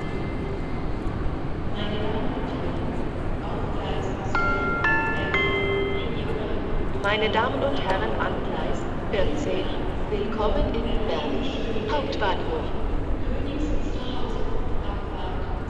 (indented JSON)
{
  "title": "berlin, main station, track 14",
  "date": "2009-05-28 11:25:00",
  "description": "soundmap d: social ambiences/ listen to the people - in & outdoor nearfield recordings",
  "latitude": "52.52",
  "longitude": "13.37",
  "altitude": "22",
  "timezone": "Europe/Berlin"
}